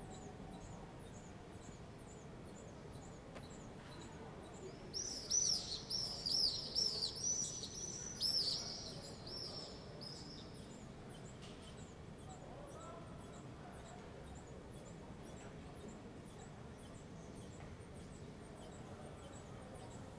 Ascolto il tuo cuore, città. I listen to your heart, city. Several chapters **SCROLL DOWN FOR ALL RECORDINGS** - Wednesday evening with birds and swallows in the time of COVID19 Soundscape
"Wednesday evening with birds and swallows in the time of COVID19" Soundscape
Chapter LXXXIX of Ascolto il tuo cuore, città, I listen to your heart, city.
Wednesday, May 27th 2020. Fixed position on an internal terrace at San Salvario district Turin, seventy-eight days after (but day twenty-four of Phase II and day eleven of Phase IIB and day five of Phase IIC) of emergency disposition due to the epidemic of COVID19.
Start at 8:05 p.m. end at 8:52 p.m. duration of recording 46’38”
Torino, Piemonte, Italia, 27 May 2020